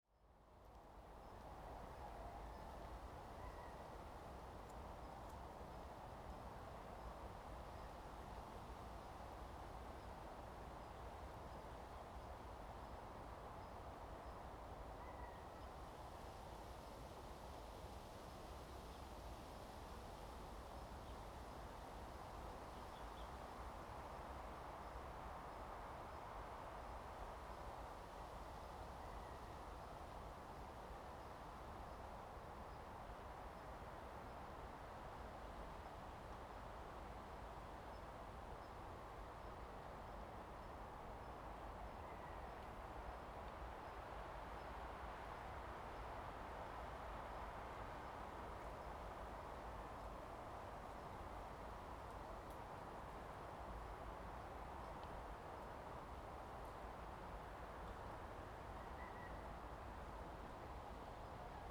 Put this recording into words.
Forest and Wind, Chicken sounds, Zoom H2n MS+XY